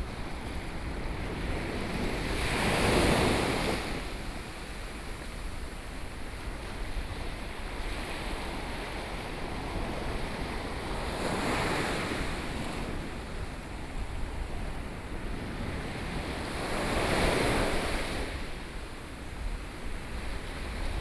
Donghe Township, Taitung County - At the seaside
The weather is very hot, Sound waves